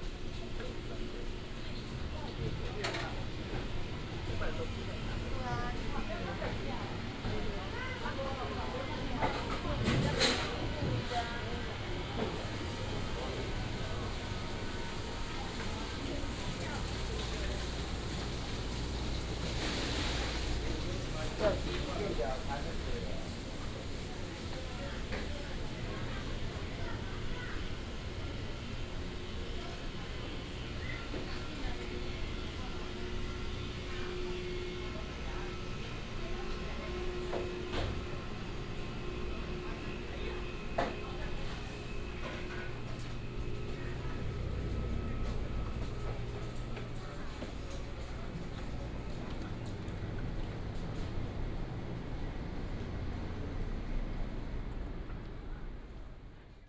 Lanzhou Market, Datong Dist., Taipei City - Walking in the market
Walking in the market, Traffic sound